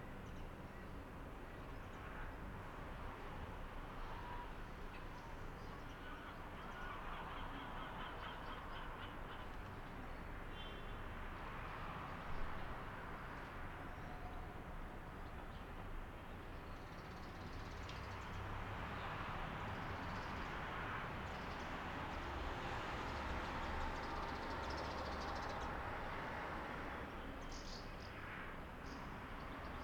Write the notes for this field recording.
Field recording, soundscape, 8th floor of building. rec. setup: M/S matrix-AKG mics in Zeppelin>Sound Devices mixer. 88200KHz